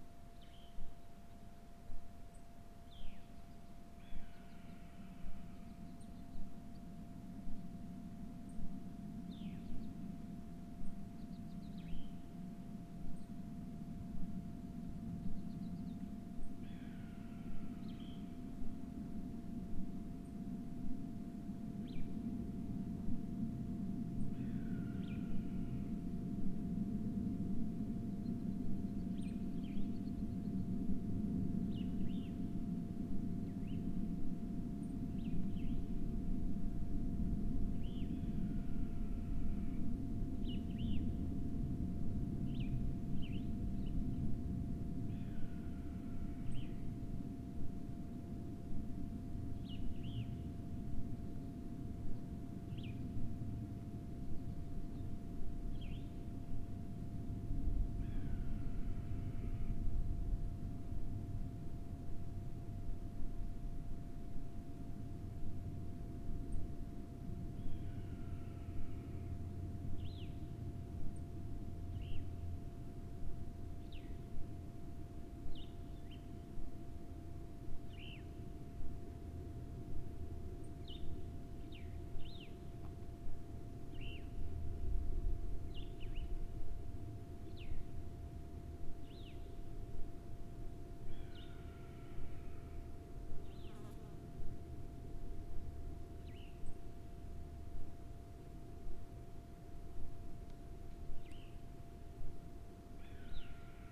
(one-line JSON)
{"title": "neoscenes: Pool Creek Canyon ambience", "date": "2007-06-21 22:35:00", "description": "Pool Creek Canyon ambience, on the summer Solstice", "latitude": "40.50", "longitude": "-109.04", "altitude": "1719", "timezone": "US/Arizona"}